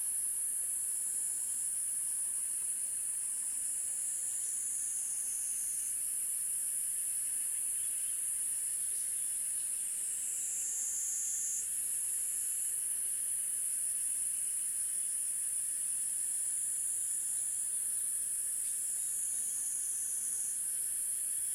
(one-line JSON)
{"title": "成功里, Puli Township, Taiwan - Birds singing and insect sounds", "date": "2016-05-18 12:46:00", "description": "Birds singing and insect sounds\nZoom H2n MS+XY", "latitude": "23.95", "longitude": "120.88", "altitude": "572", "timezone": "Asia/Taipei"}